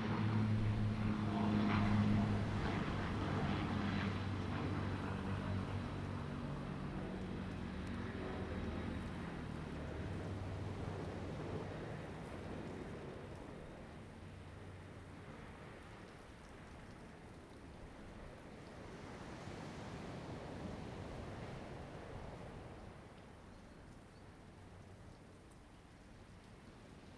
Koto island, Taiwan - Standing on the embankment side
Standing on the embankment side, Aircraft flying through, Sound of the waves
Zoom H2n MS +XY